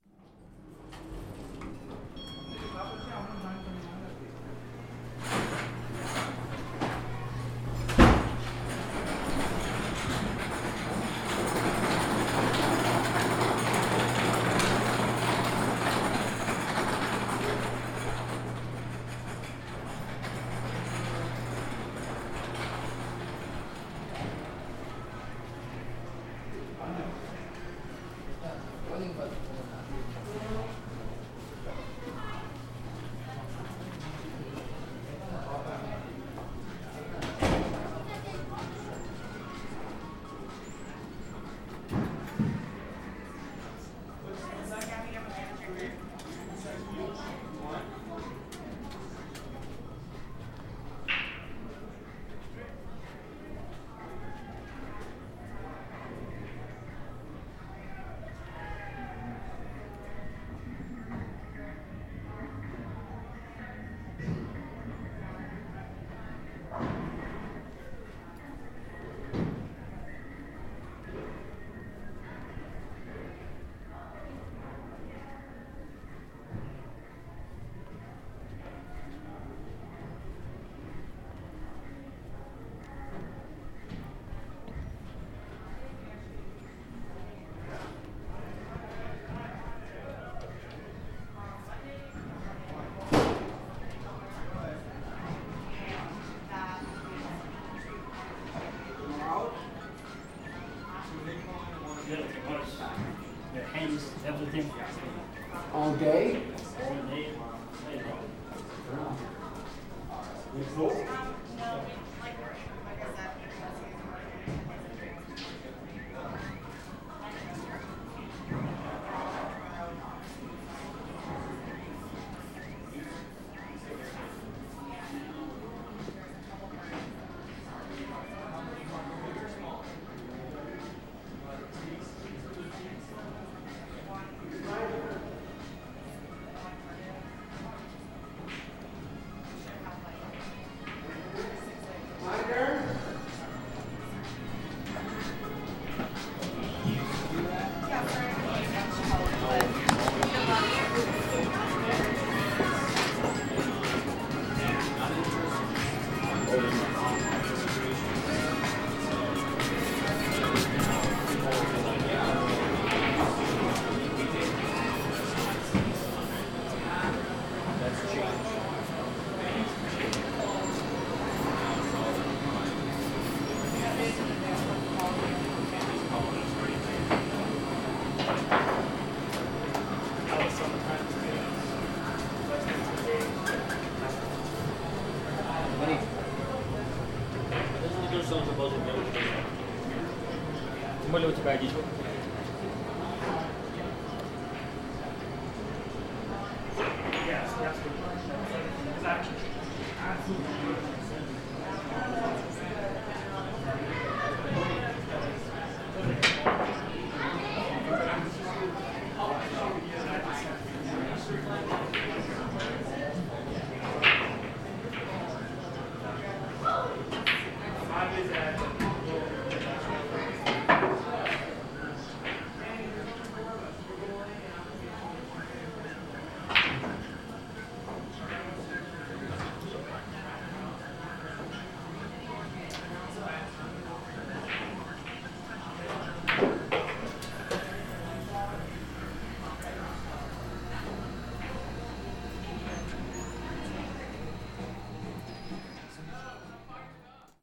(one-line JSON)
{"title": "University of Colorado Boulder, Regent Drive, Boulder, CO, USA - Game room and Bowling room", "date": "2013-02-01 17:00:00", "description": "There are many different sound in here", "latitude": "40.01", "longitude": "-105.27", "altitude": "1660", "timezone": "America/Denver"}